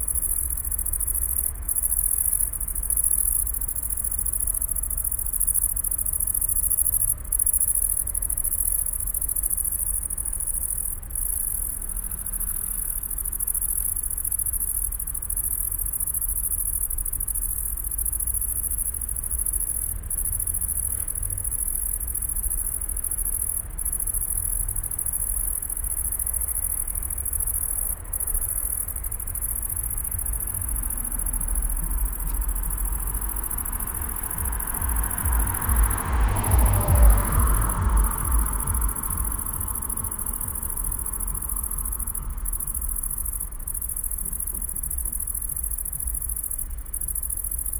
Bergius-/Willstätterstr., Neukölln, Berlin - industrial area, intense crickets
indistrial area at yet closed Bergiusstr., signs of ongoing construction works. evening ambience, intense crickets at the fence alomg the street.
(Sony PCM D50, DPA4060)